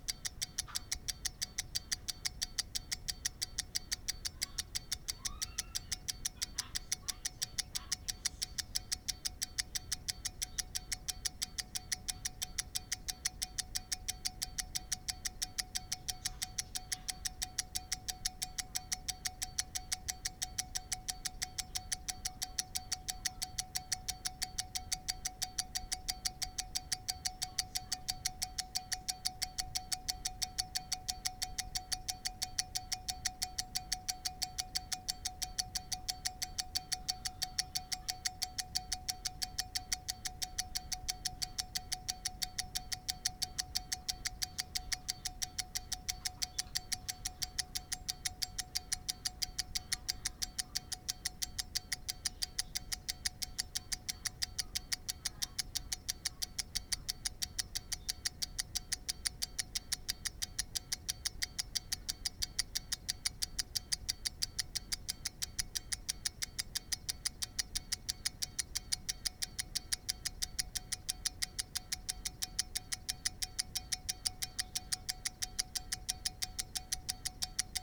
Unnamed Road, Malton, UK - pocket watch ticking ...
pocket watch ticking ... a rotary pocket skeleton watch ticking ... jrf contact mics attached to shell to olympus ls 14 ...